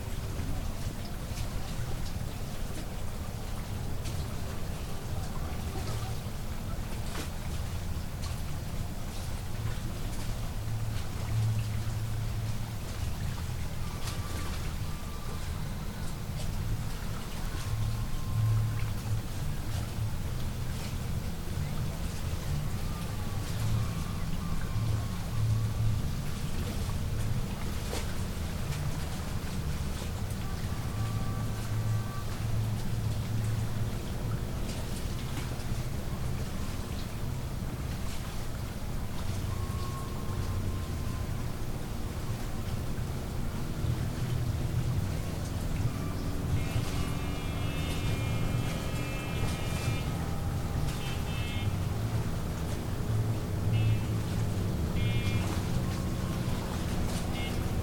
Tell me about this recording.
On the north shore of Bear Lake. The annual boat parade during Bear Lake Days festival. About a dozen boats pass, some with music and cheering. A few birch catkins fall nearby. The wake of the boats eventually hits the lakeshore. Stereo mic (Audio-Technica, AT-822), recorded via Sony MD (MZ-NF810).